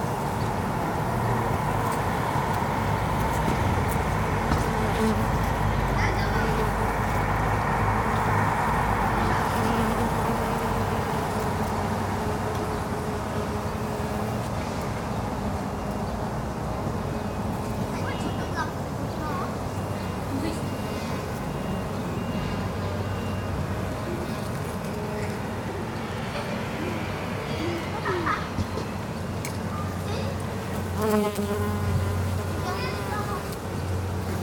Rue de Cortenbach, Bruxelles, Belgique - Children and bees
Enfants et abeilles.